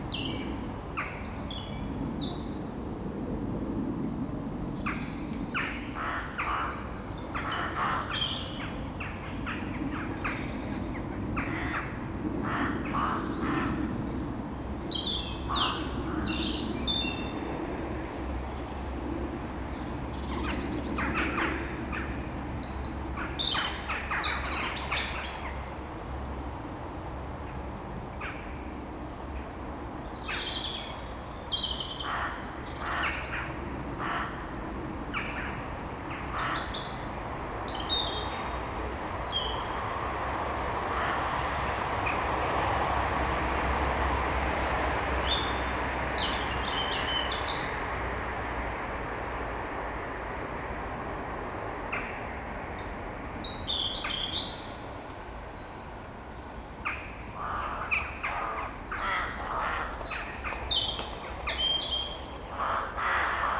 Maintenon, France - Crows war
This is the second time I put a recorder in this wood. As it's private, nobody is going here. Crows and jackdaws live on an elevated tree. Every evening, quite early, these birds talk about their day. I put a recorder, hidden, on an abandoned trunk. There's less cars than yesterday as everybody is sleeping after the too fat Christmas repast. It was the quite only and last chance to record the birds. Unfortunately, a long painful plane... This is the crows war, every early evening in winter it's like that. There's no other moment as this in daylight times, groups are dislocated in the fields, essentially to find food.
25 December 2016